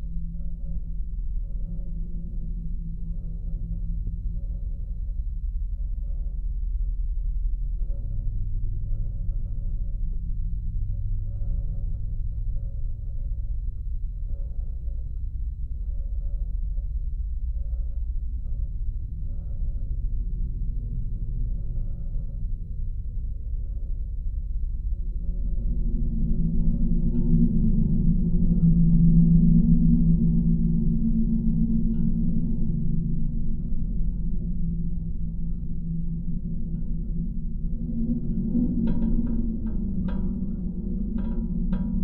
Anykščiai, Lithuania, singing electricity pole
contact microphones on a grounding wire of electricity pole
November 2016